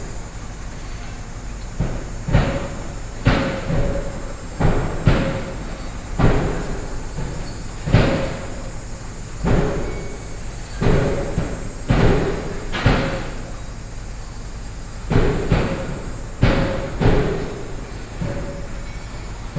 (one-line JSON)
{"title": "Jinsnan, New Taipei City - Factory machinery sounds", "date": "2012-07-11 10:00:00", "latitude": "25.21", "longitude": "121.60", "altitude": "89", "timezone": "Asia/Taipei"}